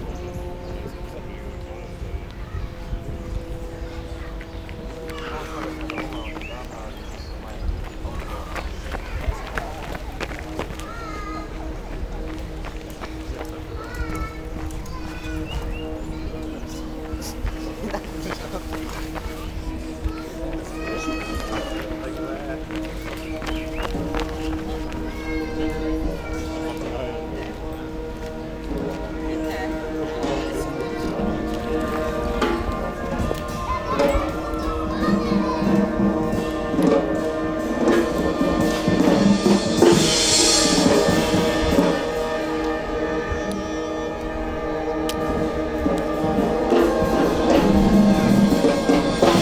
Innstraße, Innsbruck, Österreich - Musikminiaturen vogelweide
vogelweide, waltherpark, st. Nikolaus, mariahilf, innsbruck, stadtpotentiale 2017, bird lab, mapping waltherpark realities, kulturverein vogelweide, veranstaltung 10 musikminaturen von studenten des konz Innsbruck